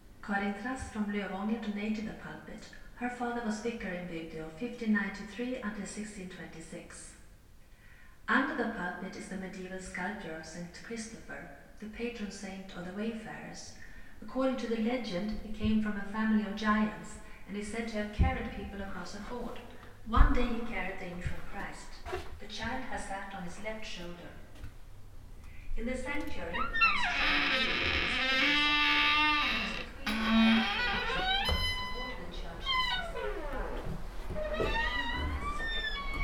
Bygdeå. Kyrka (church)
Bygdea Kyrka visit. Doors, entry, CD-guide tour with varying quality of speakers as you walk down the centre aisle. Doors.
Bygdeå, Sweden, 30 June 2011, ~12:00